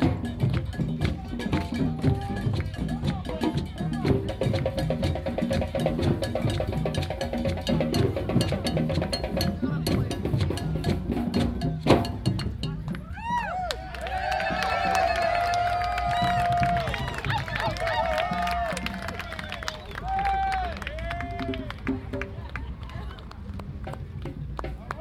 Washington Park, S Dr Martin Luther King Jr Dr, Chicago, IL, USA - Drum Circle